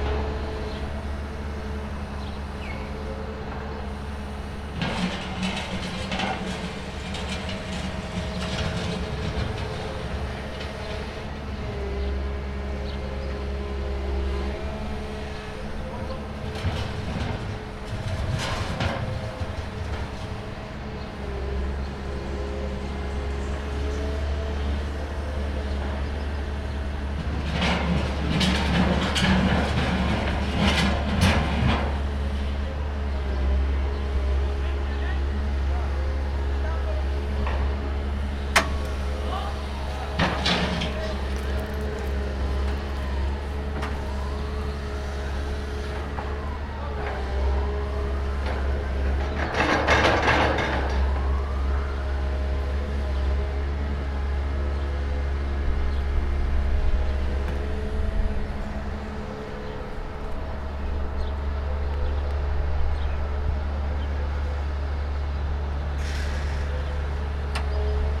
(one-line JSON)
{"title": "Sikorskiego, Gorzów Wielkopolski, Polska - Renovations in the city.", "date": "2020-04-23 15:44:00", "description": "Noises of the renovation works in the city centre. Some heavy machinery in the background.", "latitude": "52.73", "longitude": "15.23", "altitude": "25", "timezone": "Europe/Warsaw"}